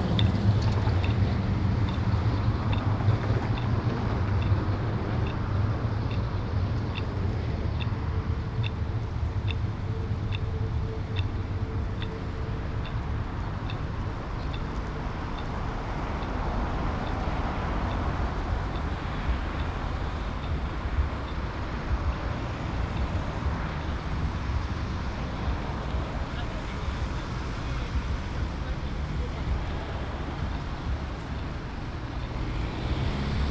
Markt, Leipzig, Germania - “Sunday summer music-walk in Leipzig: soundwalk”
“Sunday summer music-walk in Leipzig: soundwalk”
Sunday, July 19th 2020, soundwalk Marktplatz, Thomaskirke, Opera Haus, Gewandhaus, Nikolaikirke.
Start at 00:18 p.m. end at 01:28 p.m., total duration of recording 01:09:48
Both paths are associated with synchronized GPS track recorded in the (kmz, kml, gpx) files downloadable here: